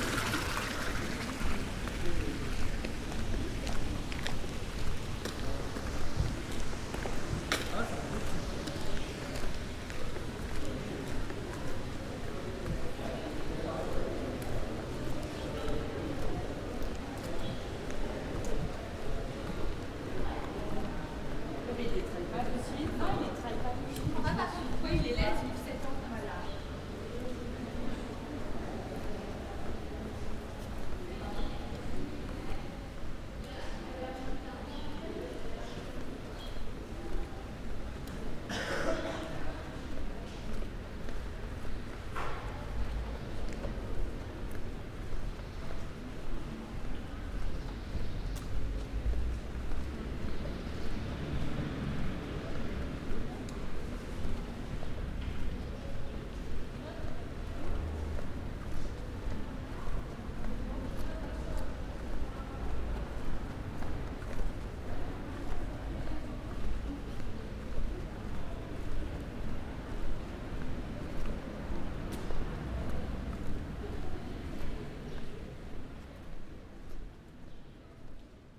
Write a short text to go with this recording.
Le calme de la cour intérieure du Musée des Beaux Arts avec sa fontaine . Difficile de s'imaginer au centre ville!